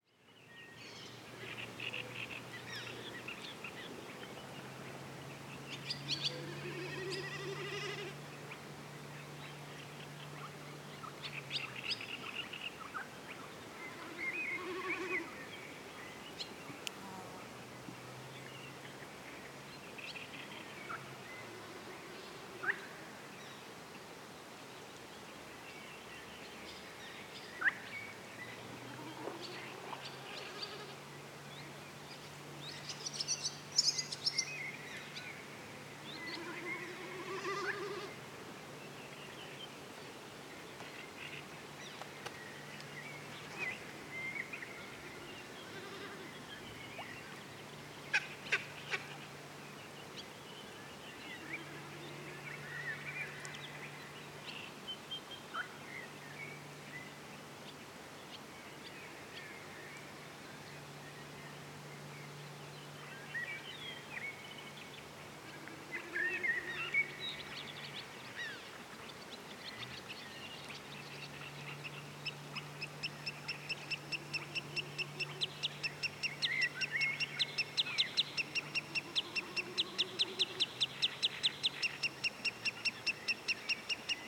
morning bird activity in the Emajogi bog. the strange electronic sound overhead is a bird known as 'common snipe'